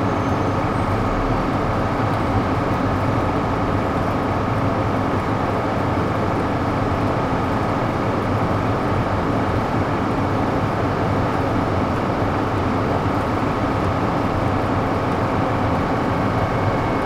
{"title": "Kelmė, Lithuania, fans are working", "date": "2019-06-12 13:30:00", "description": "fand and air conditioners are working at full power in this heat", "latitude": "55.63", "longitude": "22.93", "altitude": "132", "timezone": "Europe/Vilnius"}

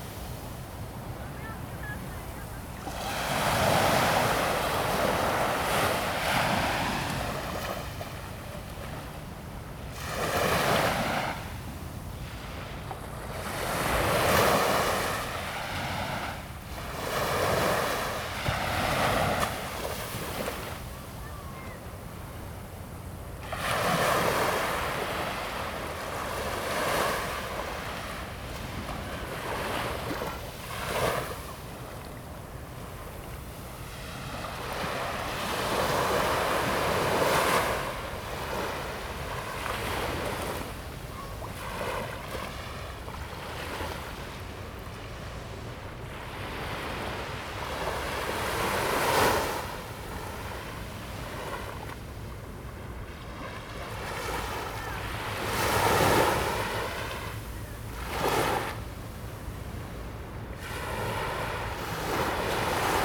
{"title": "萬里里, New Taipei City, Taiwan - At the beach", "date": "2016-08-04 10:59:00", "description": "sound of the waves, At the beach\nZoom H2n MS+XY +Sptial Audio", "latitude": "25.18", "longitude": "121.69", "altitude": "60", "timezone": "Asia/Taipei"}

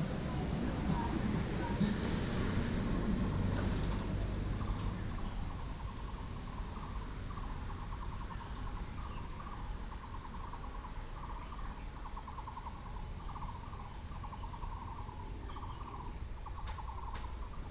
{"title": "小坪頂, Tamsui Dist., New Taipei City - Birds singing", "date": "2012-05-29 15:13:00", "description": "Birds singing, Binaural recordings, Sony PCM D50 + Soundman OKM II", "latitude": "25.16", "longitude": "121.48", "altitude": "190", "timezone": "Asia/Taipei"}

